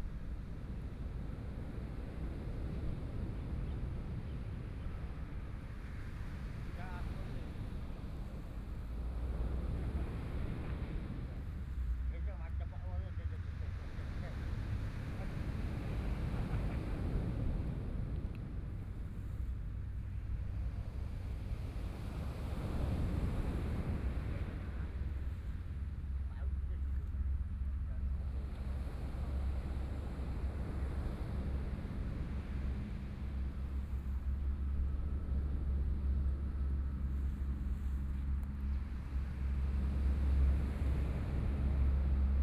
Beibin Park, Hualien City - Sound of the waves
Cloudy day, Sound of the waves, Binaural recordings, Sony PCM D50+ Soundman OKM II
Hualian City, 花蓮北濱外環道, 5 November 2013